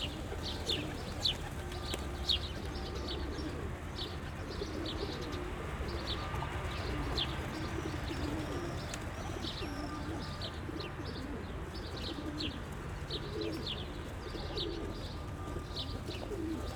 hundred pidgeons on a concrete structure above the road, which disappears into a tunnel here.
(tech: SD702, Audio Technica BP4025)